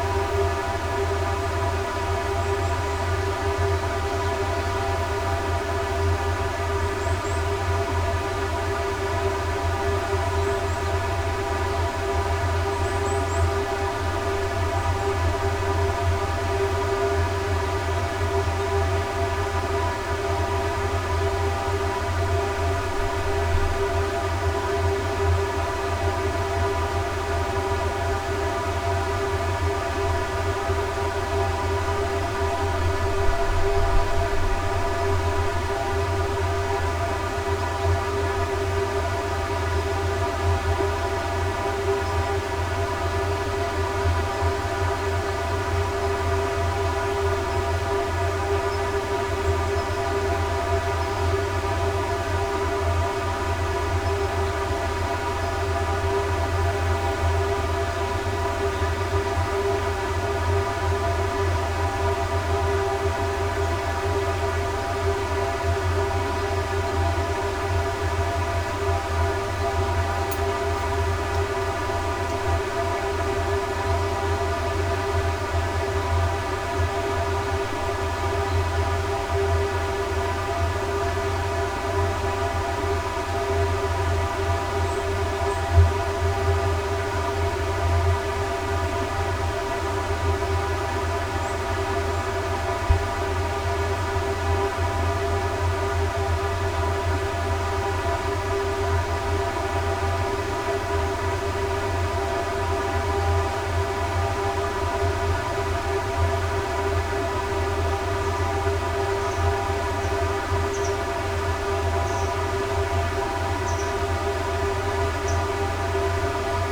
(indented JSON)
{
  "date": "2021-06-12 08:00:00",
  "description": "large upturned clay urn 大항아리...roadside",
  "latitude": "37.93",
  "longitude": "127.64",
  "altitude": "229",
  "timezone": "Asia/Seoul"
}